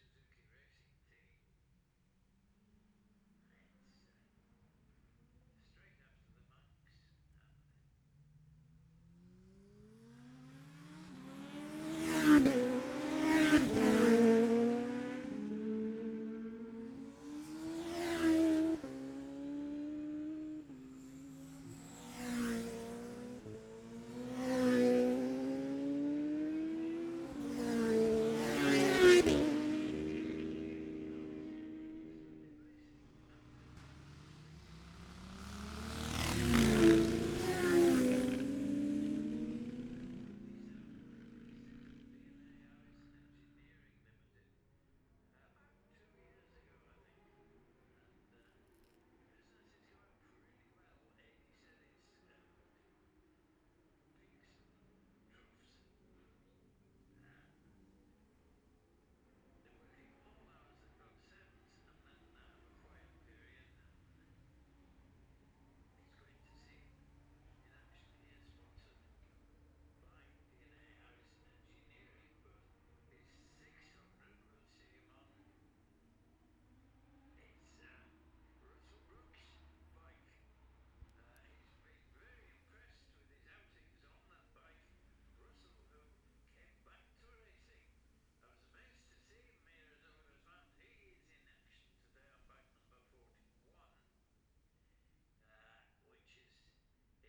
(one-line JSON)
{"title": "Jacksons Ln, Scarborough, UK - gold cup 2022 ... classic s'bike practice ...", "date": "2022-09-16 10:39:00", "description": "the steve henshaw gold cup 2022 ... classic superbike practice ... dpa 4060s clipped to bag to zoom h5 ...", "latitude": "54.27", "longitude": "-0.41", "altitude": "144", "timezone": "Europe/London"}